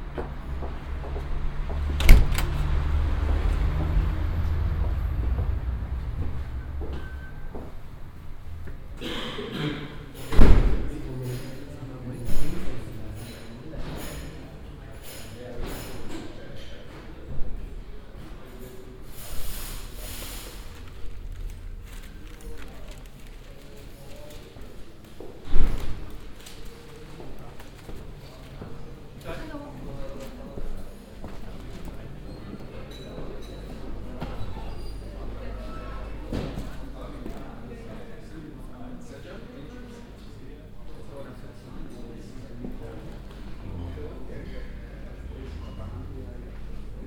berlin, kurfürstenstraße, inside cafe einstein
soundscape of the interior of the cafe einstein in the early afternoon
soundmap d: social ambiences/ listen to the people - in & outdoor nearfield recordings
19 May 2009, 10:07